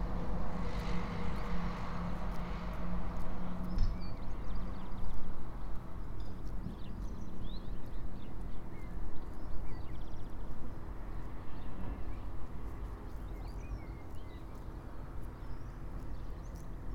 Neustift im Stubaital, Österreich - ziege & stubaitalstrasse